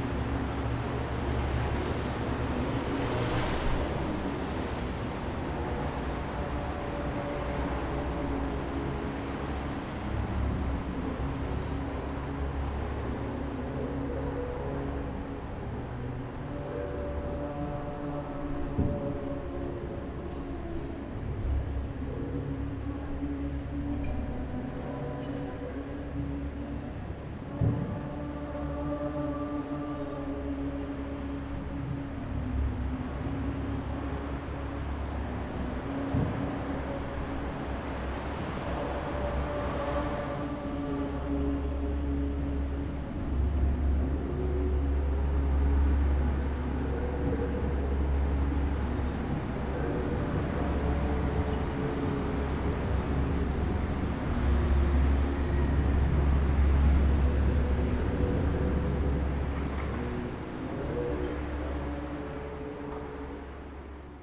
Funchal - ceremony
This is a recording I made from the balcony of a ceremony I couldn't identify but that could be heard from afar. Sinister and immersive...